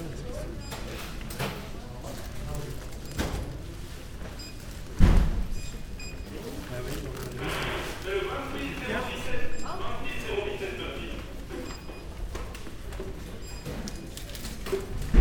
Ottignies-Louvain-la-Neuve, Belgique - In the supermarket
Shopping in the supermarket, on a quiet saturday afternoon.